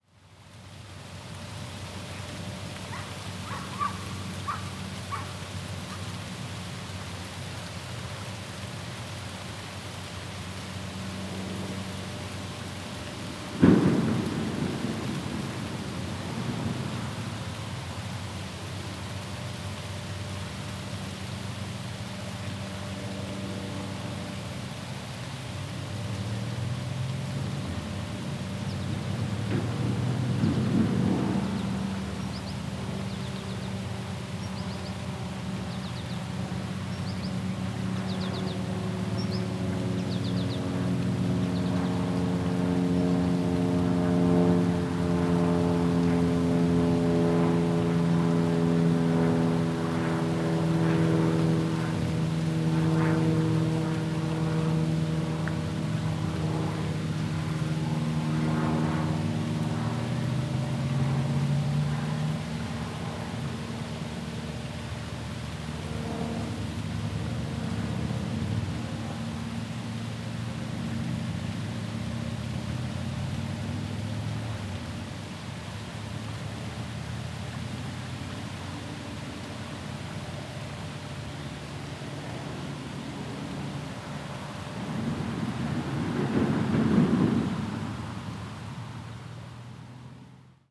Martin Goodman Trail, Toronto, ON, Canada - WLD 2018: Unwin Ave.
Rustling poplar leaves, birds, cars crossing metal bridge, light aircraft.
18 July 2018